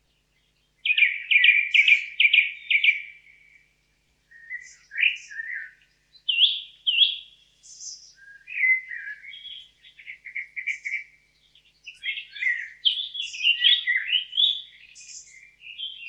Walking through the forest, just in that time, some exciting birds started to have a hot discussion. Bird Singing with hot loud voices during the hot summer day.
ZOOM H4n PRO
Binaural Microphones